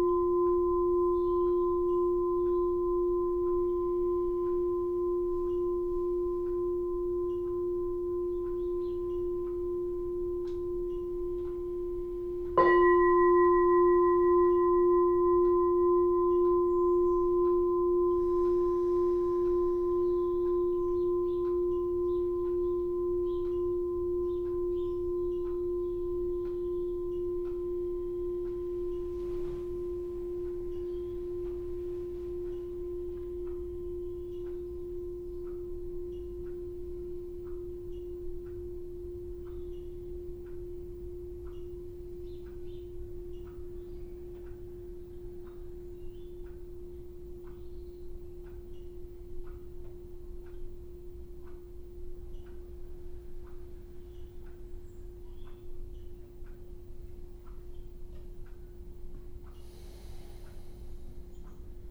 Unnamed Road, Dorchester, UK - Formal Tea Meditation Pt1
A formal tea meditation hosted by Brothers Phap Xa who facilitates and Phap Lich who prepares the tea. Guests are invited into the meditation hall with the sound of the bell, they enter in single file and bow to the two hosts. Phap Xa welcomes the guests and the ceremony begins with a short period of sitting meditation marked by three sounds of the larger bell. (Sennheiser 8020s either side of a Jecklin Disk on SD MixPre6)